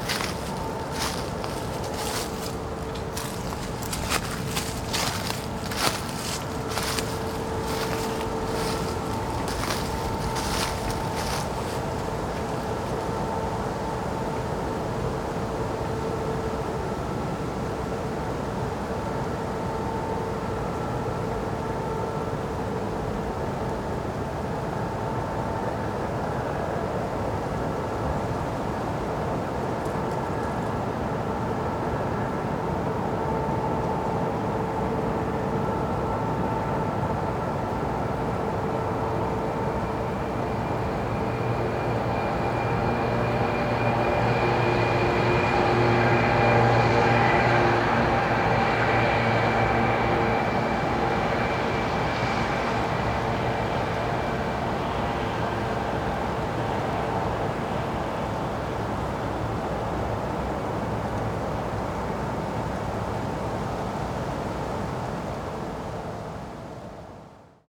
Grevenbroich, Germany - Walking above the mine, stopping to listen
Autumn leaves are on the path, the sky is heavy and grey. The wind gusts strongly. A tree plantation blocks the mine from view.
2 November 2012